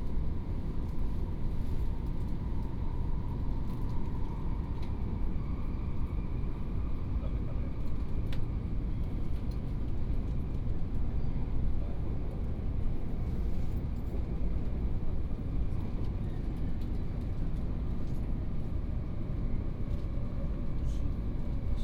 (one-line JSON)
{"title": "Banqiao District, New Taipei City - High - speed railway", "date": "2017-01-17 09:14:00", "description": "High - speed railway, Train message broadcast", "latitude": "25.03", "longitude": "121.48", "timezone": "GMT+1"}